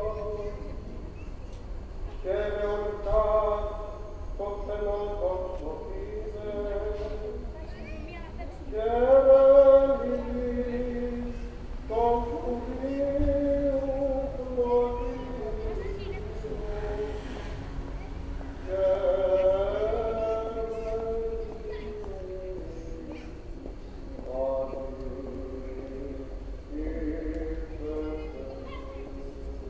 {"title": "Kos, Greece, mass at church", "date": "2016-04-15 20:20:00", "latitude": "36.89", "longitude": "27.29", "altitude": "10", "timezone": "Europe/Athens"}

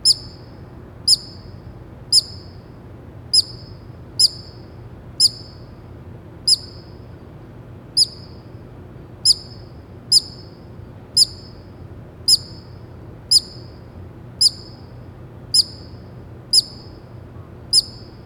{"title": "angry gopher, Banff Centre Canada", "date": "2012-06-20 18:40:00", "description": "sharp squeak of an angry gopher behind my dormitory at the Banff Centre", "latitude": "51.17", "longitude": "-115.56", "altitude": "1437", "timezone": "America/Edmonton"}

